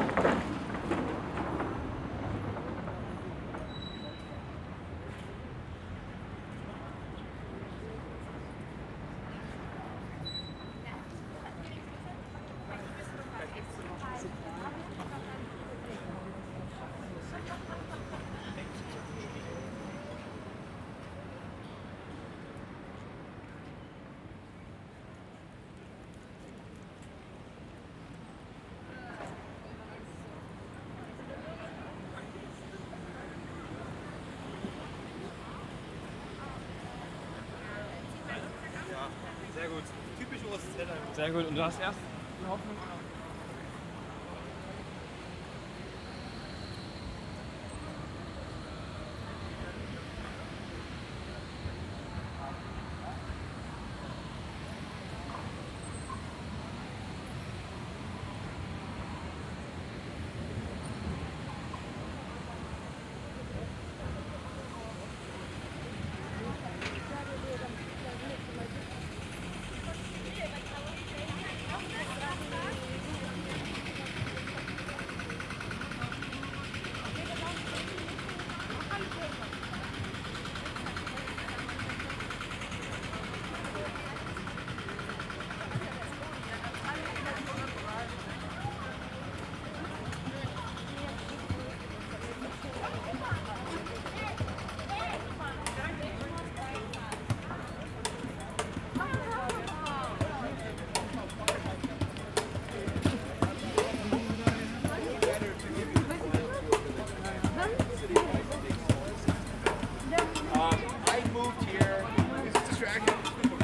Alexanderplatz, Berlin, Germany - Construction works, a tour guide, a man playing percussions, trams.
A sunny day in September.
Tascam DR-05 and Soundman OKM1.
2 September, 14:00